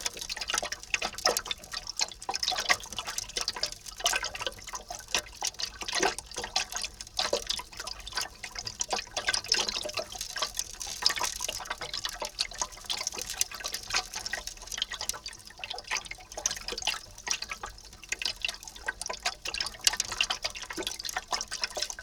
a metal ring in the creek recorded with a contact mic
Improvement District No., AB, Canada, 21 June 2012